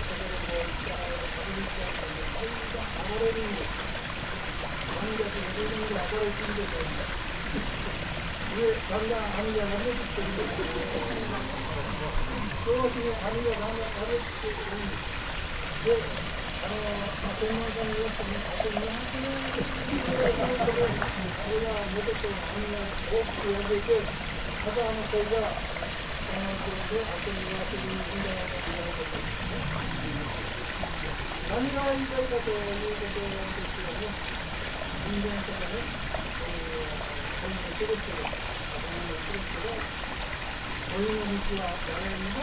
waterish areas, Shugakuin Imperial Villa, Kyoto - streams
gardens sonority
dark green lights, curves of water from all directions, liquid flow
Kyōto-fu, Japan, 1 November 2014, 15:41